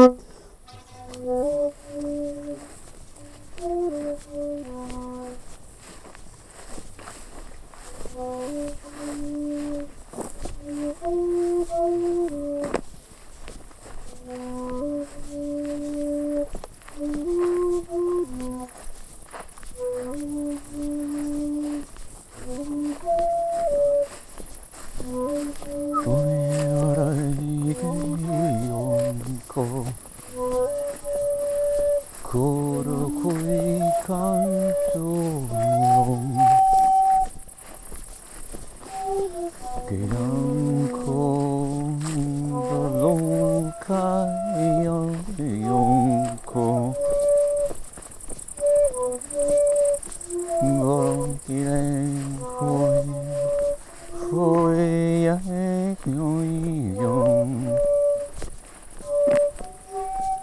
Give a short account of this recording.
session while walking in a wet zone of the woods. Recorded during KODAMA residency september 2009